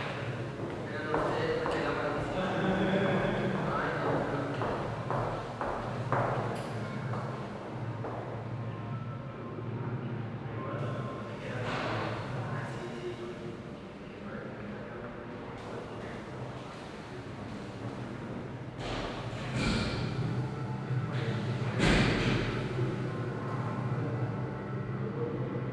Werden, Essen, Deutschland - essen, folkwang university of arts
Im historischen Gebäude der Folkwang Universtät in der Theater Abteilung auf der ersten Etage. Der Klang von Stimmen und Schritten hallt in der hohen Gangarchitektur und eine unbestimmte musikähnliche hohe Frequenz.
Inside the historical building of the folkwang university of arts at the theatre department on the first floor. The sound of voices and steps reverbing in the architecture plus a strange high music like frequency.
Projekt - Stadtklang//: Hörorte - topographic field recordings and social ambiences
Essen, Germany